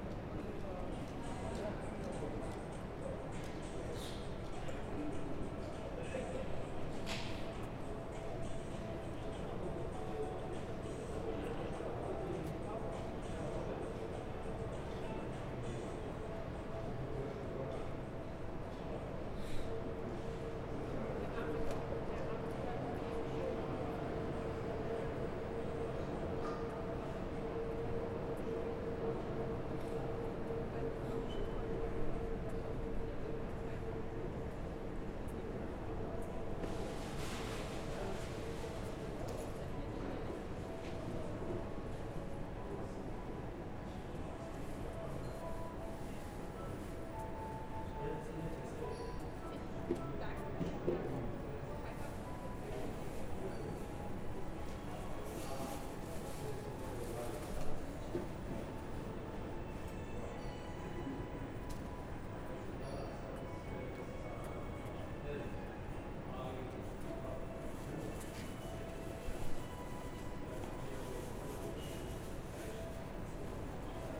Securitas Sicherheitsdienst (Aviation), Flughafen Frankfurt am Main, Frankfurt am Main, Deutschland - Expulsion from the Airport
Nearly no one is waiting, but at least some are in this empty lobby of the airport, close to the entrance of Terminal 1, B. A man is asking for money, he asked me already at the main train station in Frankfurt and at the train station of the airport (hear there), now the securities recognise him and tell him to leave. Arount 3:40. They are whisteling, "Guten Tag der Herr, was machen Sie" "Nix".